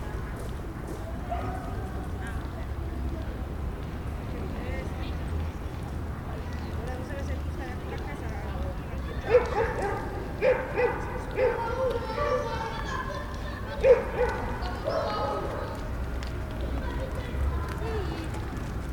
Roma, IT, Parco Villa Torlonia - At dawn
At dawn in the park of Villa Torlonia in Rome: ranting blackbirds, dogs, joggers, children, a small babbling well, a plane approaching Roma Ciampino... Tascam RD-2d, internal mics.
11 October, 19:00